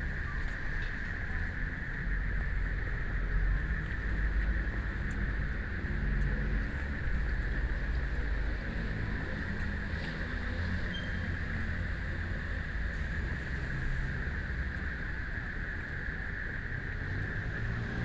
Walking along the lake, The park at night, Traffic Sound, People walking and running, Frogs sound
Binaural recordings
Taipei City, Taiwan